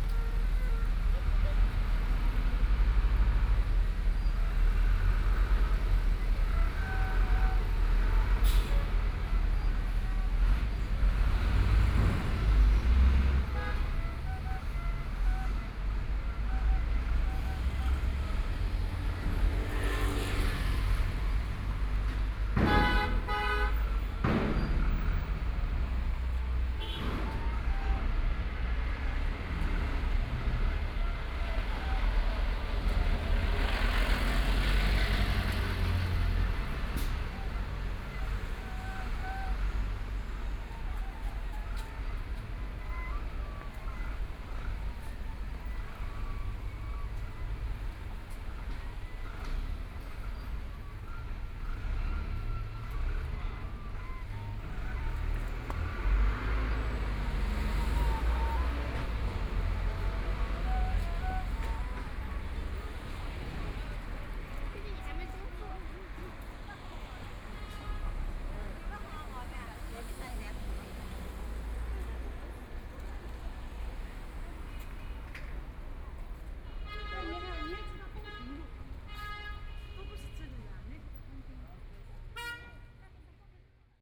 Taojiang Road, Shanghai - Walking on the street
Follow the footsteps, Walking on the street, In the bus station, erhu sound, Construction site sounds, Binaural recording, Zoom H6+ Soundman OKM II
Shanghai, China